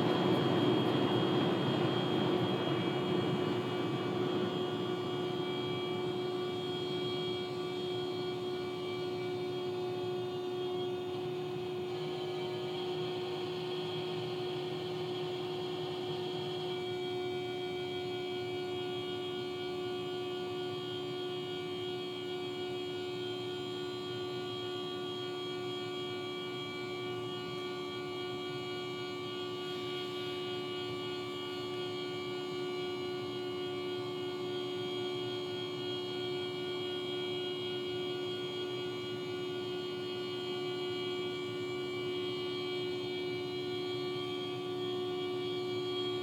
Ave, Queens, NY, USA - Unintentional Horn-Drone Performance
The sound of a car's horn parked nearby. The horn sounded for at least 3 to 4 hours, waking up the surrounding neighborhood.
United States, March 2022